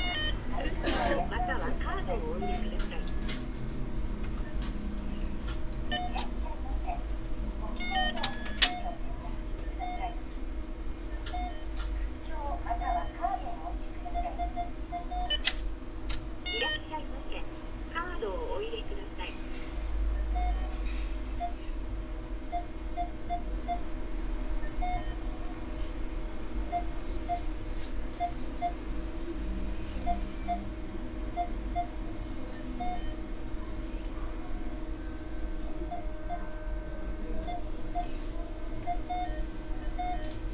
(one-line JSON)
{"title": "yokohama, post office", "date": "2011-07-01 12:34:00", "description": "At the yokohamamimato post office. General atmosphere and the sound of two banking machines.\ninternational city scapes - topographic field recordings and social ambiences", "latitude": "35.45", "longitude": "139.64", "timezone": "Asia/Tokyo"}